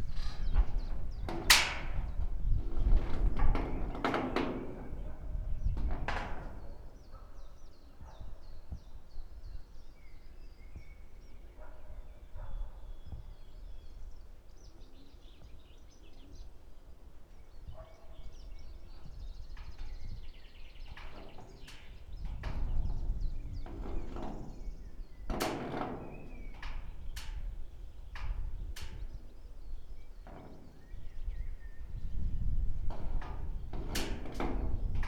Sasino, along Chelst stream - containers collapsed
place revisited after a year. the ship containers have collapsed. warped pieces of their bodies bend and make creepy sounds in the wind. (sony d50)
Poland